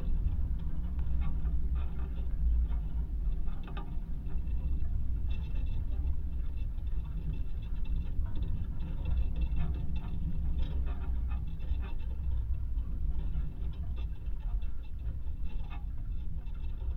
Sirutėnai, Lithuania, rusty barbed wire
A fragment od barbed wire, probably even from soviet times. Contact microphones recording.